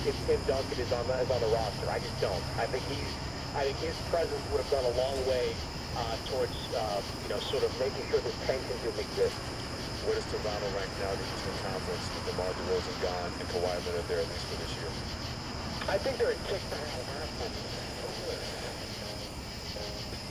W Arthur Hart St, Fayetteville, AR, USA - Late-night FM and Open Window (WLD2018)
A brief survey of the FM band with the bedroom window open in Fayetteville, Arkansas. Also traffic from Highway 71/Interstate 49, about 200 feet away, and cicadas. For World Listening Day 2018. Recorded via Olympus LS-10 with built-in stereo mics.